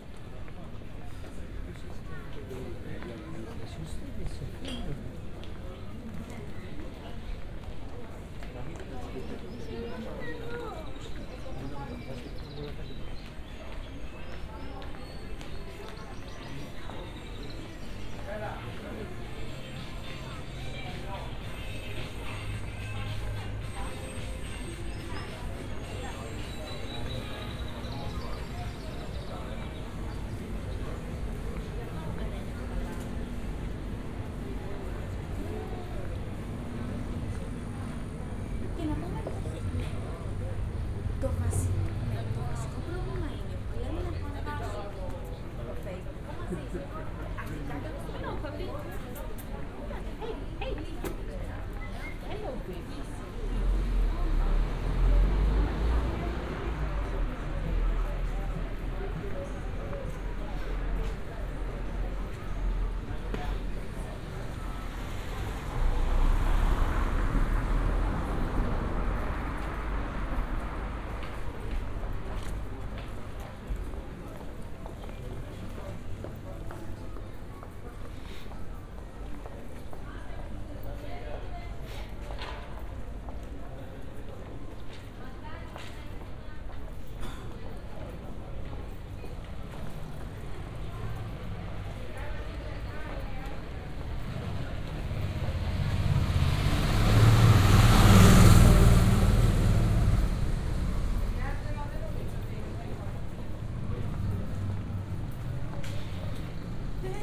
Athens, district near Acropolis - evening walk
(binaural) walking around narrow streets in a district at the foot of Acropolis. Passing by souvenir shops, restaurants and cafes. some empty, some buzzing with conversations. (sony d50 + luhd pm-01 bins)
Athina, Greece